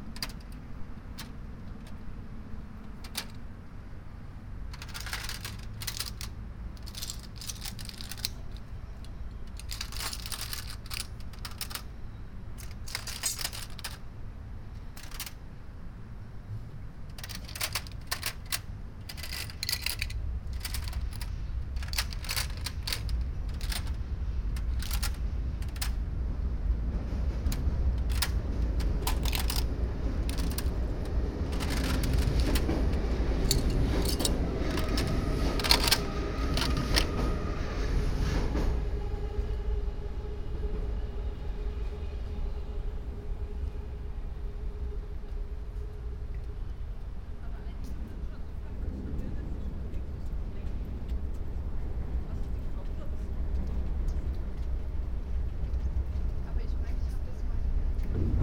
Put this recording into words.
the sound of "fortune" lockers that are here attached by hundreds of couples at the fence that secures the railway track. trains passing the iron rhine bridge in the afternoon. soundmap nrw - social ambiences and topographic field recordings